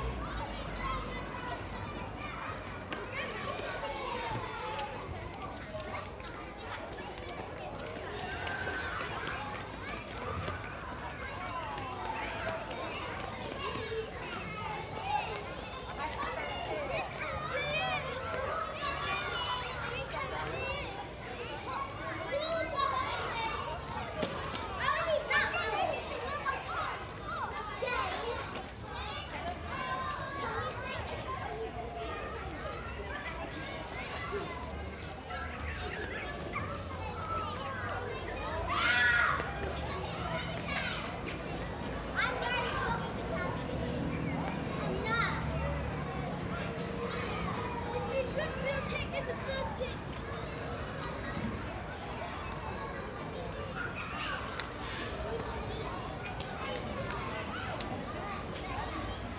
William Patten School, Stoke Newington Church Street, London - Afternoon break at the primary school. Children playing tag.
[Hi-MD-recorder Sony MZ-NH900, Beyerdynamic MCE 82]
England, United Kingdom, European Union, 14 February